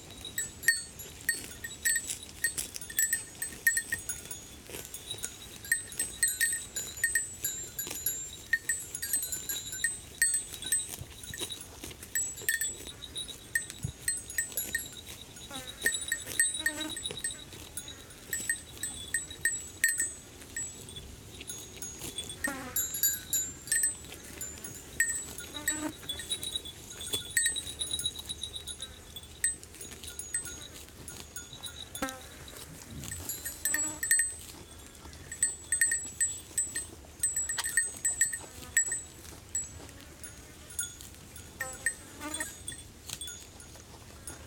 Unnamed Road, Les Monts d'Aunay, France - Goats and bell on the Montpinçon
Peaceful weather on the highest point of Calvados
ORTF
Tascam DR100MK3
Lom Usi Pro.
Normandie, France métropolitaine, France